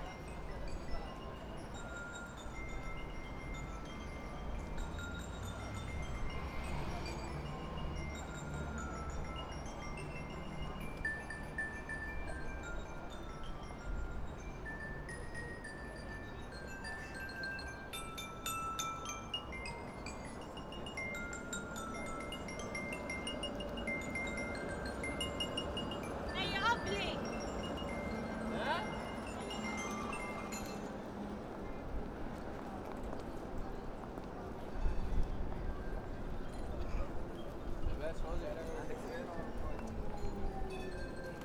Amsterdam, Dam Square, Street Percs